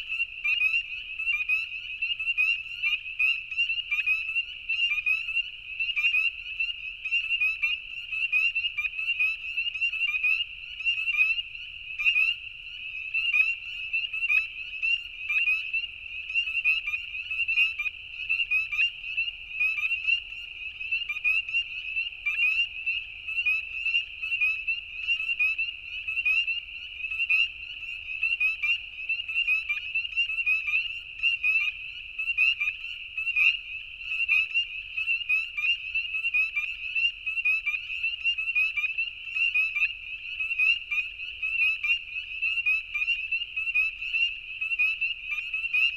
Concession Road 17 E, Tiny, ON, Canada - Peepers - Tiny Township - Concession Road 17East
Roadside recording of spring peepers in ditch beside the road. Night recording less than 1hr after sunset. At 00:42 sec coyotes can be heard in the distance. No post processing.
25 April 2019